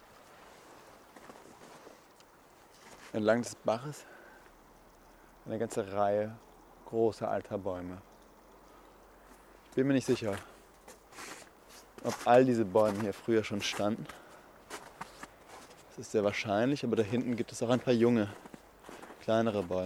Erinnerungsspaziergang am Mühlenbach
Ein Erinnerungsspaziergang, dessen Eindrücke direkt festzuhalten versucht wurden. Orte der Kindheit sind melancholische Orte, wenn man sie wieder aufsucht, sie verursachen jene Unruhe, sich nicht mehr sicher zu sein. So hält man sich an Bildern fest, denen man Ewigkeit zuschreiben möchte, aber meistens sieht dort alles schon ganz anders aus.